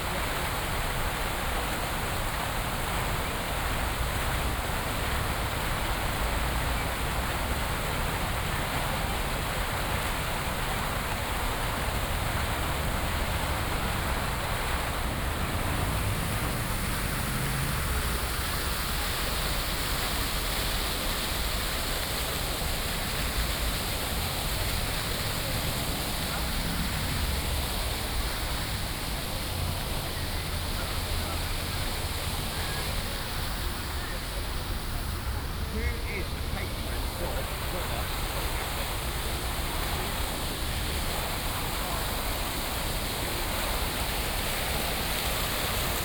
Athen, Syntagma Square - fountain
fountain on Syntagma square
(Sony PCM D50, OKM2)
Athina, Greece